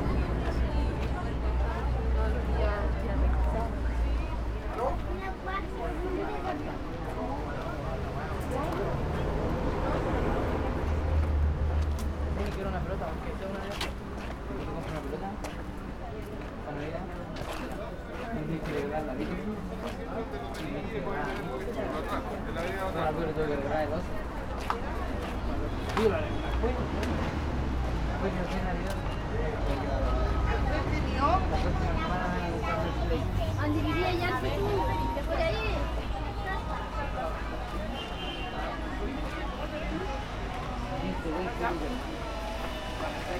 Plaza Salvador Allende, Valparaíso, Chile - market soundwalk
market at Plaza Salvador Allende, soundwalk at afternoon
(Sony PCM D50)
Valparaíso, Región de Valparaíso, Chile